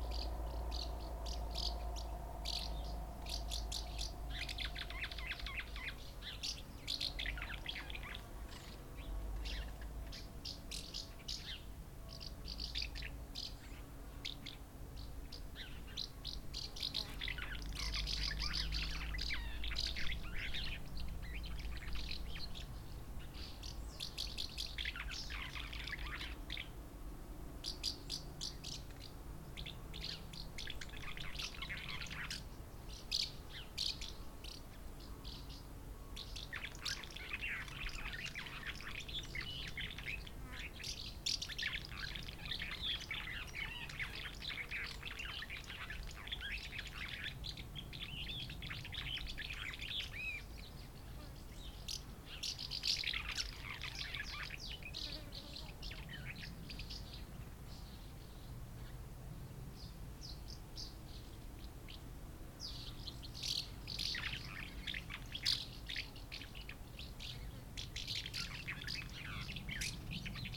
Rte de Rocheret, Saint-Offenge, France - nid d'hirondelles
Près d'un nid d'hirondelles sous un toit, c'est la campagne, passage d'un engin agricole.